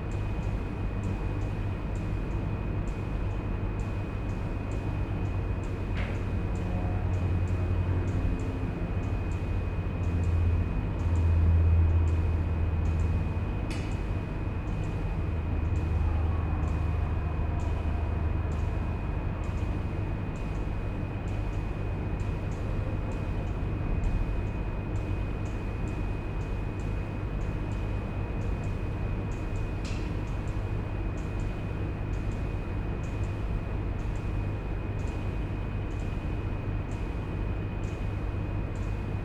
Stadt-Mitte, Düsseldorf, Deutschland - Düsseldorf, Schauspielhaus, big stage, audience space
Inside the theatre on the big stage of the house recording the ambience in the audience space. The sound of the room ventilation with regular click sounds from an alarm system. In the background sounds from a rehearsal in the foyer of the house and some doors being closed on the stage.
This recording is part of the intermedia sound art exhibition project - sonic states
soundmap nrw -topographic field recordings, social ambiences and art places
Düsseldorf, Germany, 15 December 2012